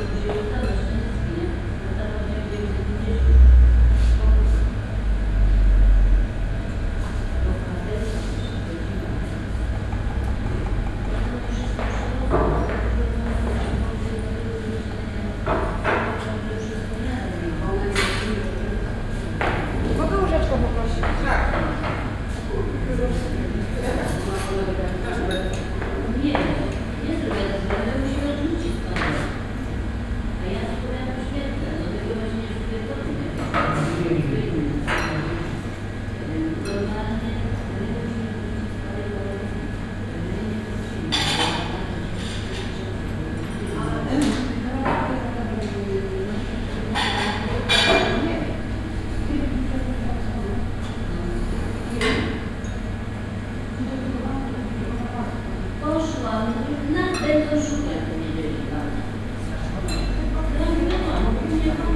Poznan, Ratajskiego Square, Pod Arkadami diner - short visit in a diner
(binaural recording, plz use headphones) A short stop in a diner called Pod Arkadami (Under the Arcades). The bar has been located there as long as I can remember but couldn't find any precise information on the web on this topic. It serves cheep, simple food and is visited by a rather unique clientele. A homeless man snorting right into his soup is not an unfamiliar sight there. It wasn't busy the day I recorded, just a few elders having their lunch. Hum of the refrigerated display case floods the room, all the cutlery rattle gets reverberated of the empty walls and concrete flooring. There are so many reflections in this room that it is impossible to talk quietly/privately. (sony d50 + luhd pm01 bins)
Poznań, Poland, 5 April 2018